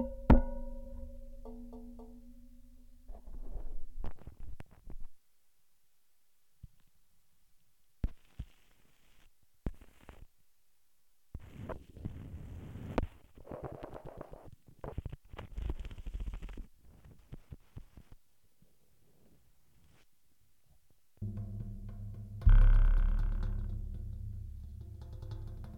Knjižnica Franceta Bevka, Nova Gorica, Slovenija - Zvoki notranje knjižnične ograje poleg stopnic
A recording of a stair fence.
Recorded with my friends homemade contact microphone and Sony PCM-M10.